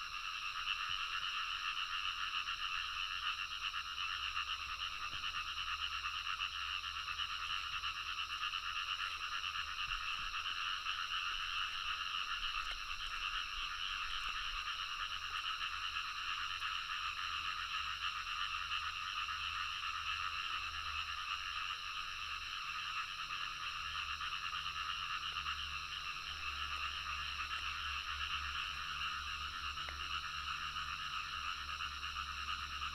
Zhonggua Rd., TaoMi Li, Puli Township - Frogs and Insects sounds
Early morning, Bird calls, Croak sounds, Insects sounds, Frogs sound
Puli Township, Nantou County, Taiwan